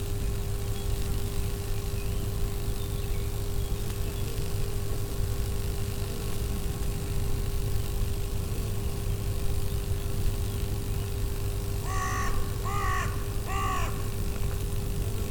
Courcelles, Belgique - Worrisome power station
Worrisome sound of a power station. Surprise at 2:40 mn, and apocalypse beginning at 3:43 mn !